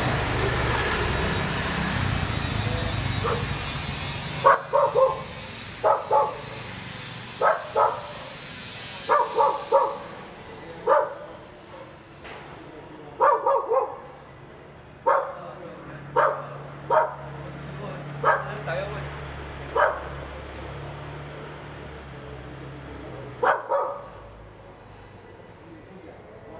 Ln., Zhulin Rd., Yonghe Dist., New Taipei City - a small alley
a small alley, Dogs barking, Carpentry Workers, Construction Sound, Sony ECM-MS907, Sony Hi-MD MZ-RH1